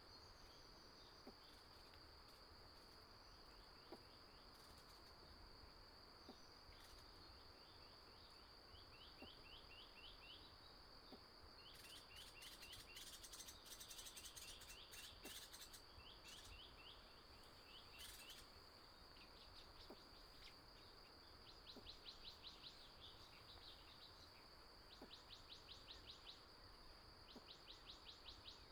達保農場, 達仁鄉台東縣 - early morning
early morning, Bird cry, Stream sound